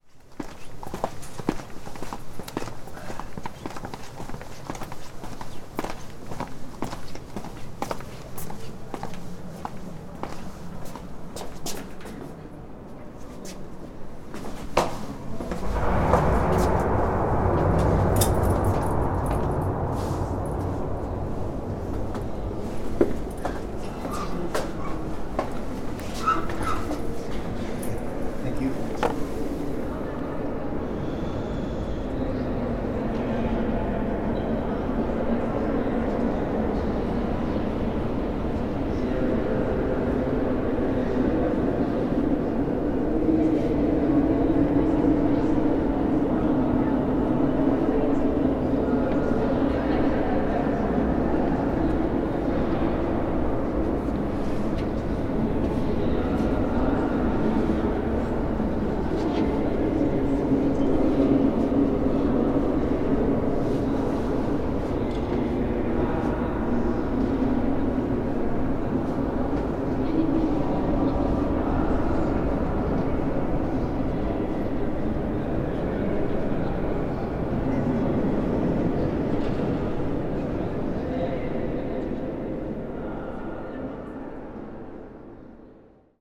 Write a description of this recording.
The Dome cupola by Filippo Brunelleschi has a really long echo...